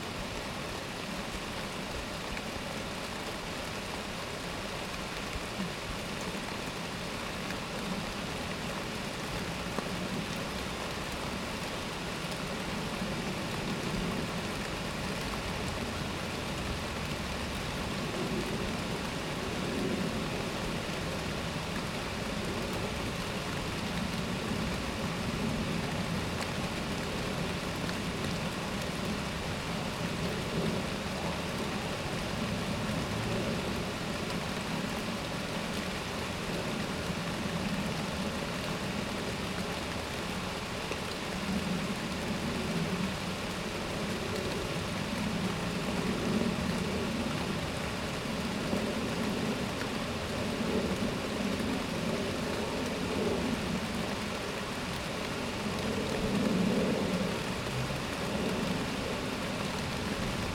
Walking Festival of Sound
13 October 2019
Sheltering in trees in heavy rain
13 October, 15:45